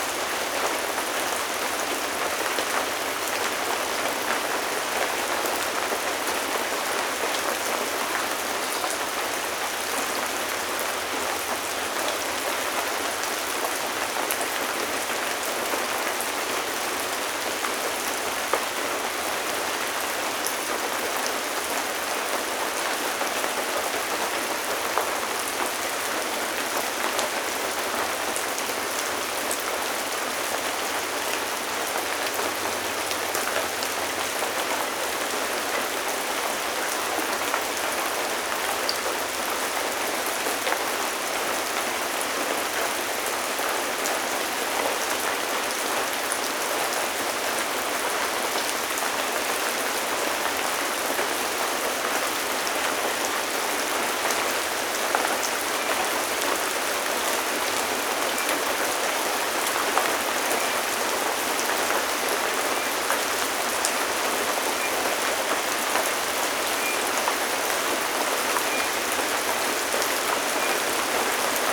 Canto da Floresta - Light rain in Serrinha do Alambari
Rain during the night in the Brazilian forest, in the small village of Serrinha do Alambari in the state of Rio de Janeiro. Recorded from the balcony of the house, during the night (around midnight for this part of the recording)
GPS: -22.392420 -44.560264
Sound Ref: BR-210831-02
Recorded during the night on 31st of August 2021